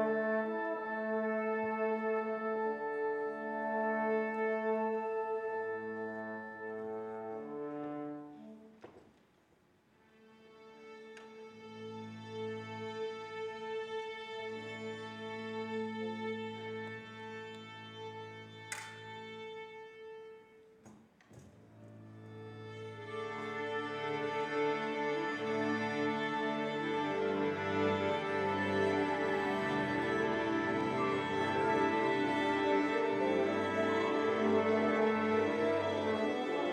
"Le Solar" - La Comète - St-Etienne
Orchestre du Conservatoire Régional de St-Etienne
Extrait du concert.
ZOOM F3 + AudioTechnica BP 4025